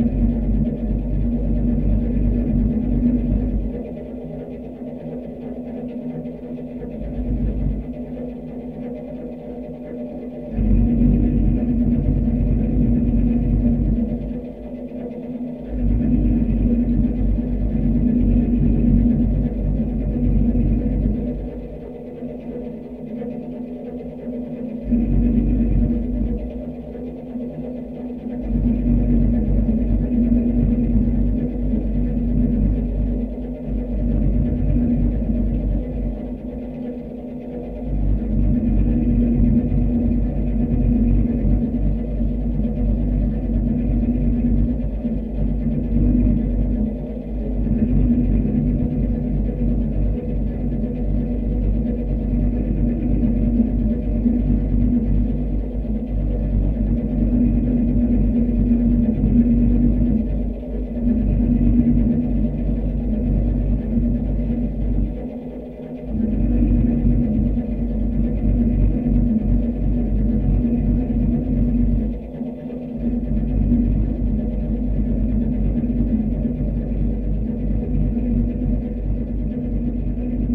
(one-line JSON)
{"title": "Kaliningrad, Russia, advertising board", "date": "2019-06-07 11:45:00", "description": "contact microphone on a base of mechanical advertising board", "latitude": "54.71", "longitude": "20.51", "altitude": "2", "timezone": "Europe/Kaliningrad"}